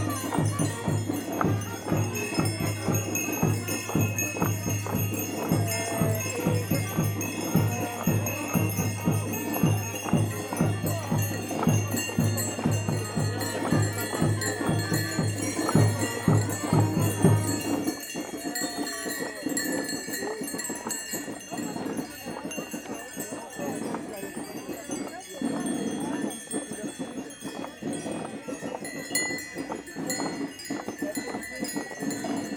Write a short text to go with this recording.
This long recording is about the Gilles de Binche. It's a traditional carnaval played in some parts of Belgium. People wear very special costumes, Wikipedia describes : made with a linen suit with red, yellow, and black heraldic designs. It's trimmed with large white-lace cuffs and collars. The suit is stuffed with straw, giving the Gille a hunched back. These Gilles are playing music and dancing in the streets, throwing oranges on everybody, on cars, in the houses if windows are open. It's very noisy and festive. Some of the Gilles wear enormous, white, feathered hats. Above all, the Gilles de Binche are EXTREMELY DRUNK ! It's terrible and that's why the fanfare is quite inaudible ! But all this takes part of our heritage. At the end of the day, they can't play anything, they yell in the streets and they piss on the autobus !! These Gilles de Binche come from La Louvière and they are the Gilles de Bouvy troop.